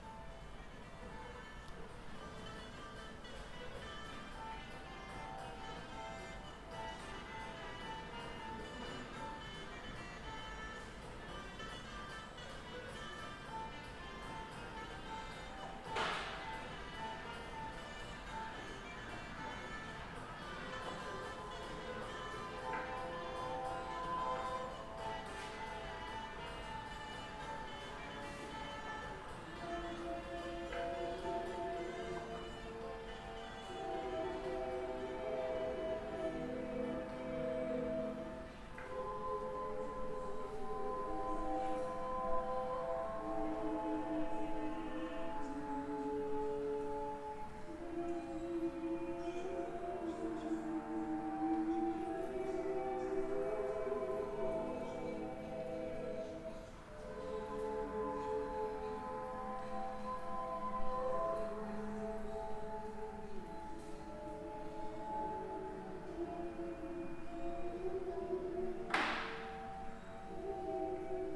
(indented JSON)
{
  "title": "Hof van Busleyden, Mechelen, België - Hof van Busleyden",
  "date": "2019-02-02 15:38:00",
  "description": "[Zoom H4n Pro] Entry hall of the permanent collection in Hof van Busleyden museum.",
  "latitude": "51.03",
  "longitude": "4.48",
  "altitude": "6",
  "timezone": "Europe/Brussels"
}